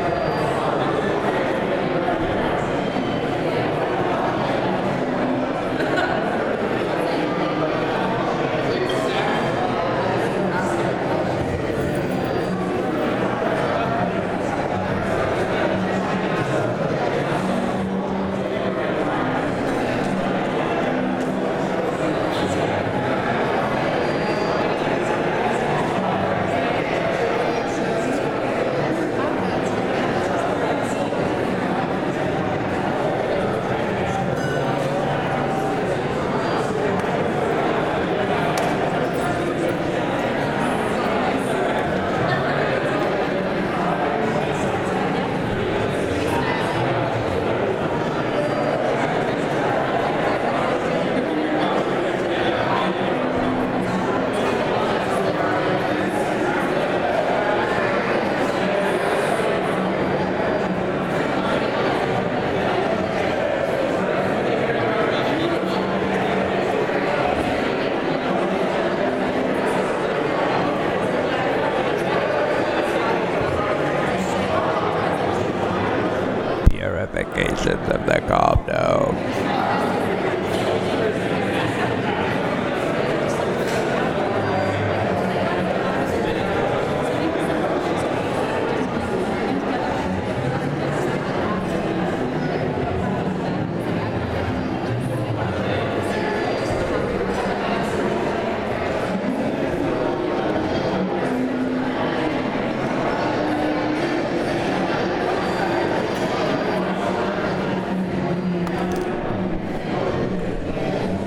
Orlando Airport, waiting in lounge, Florida
Orlando Airport, Florida. Crowds, Field.
Orlando, FL, USA, 10 May 2010